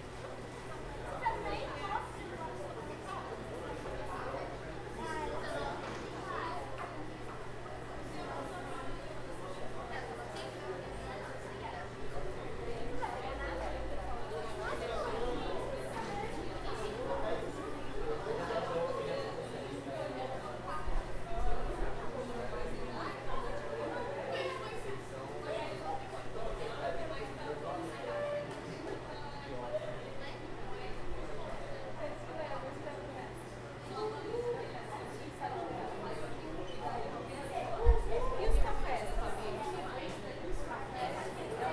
Gravação ambiente do Starbucks da Avenida Paulista numa manhã de dia de semana.
Gravação feita por: Luca, Luccas, Bianca e Rafael
Aparelho usado: Tascam DR-40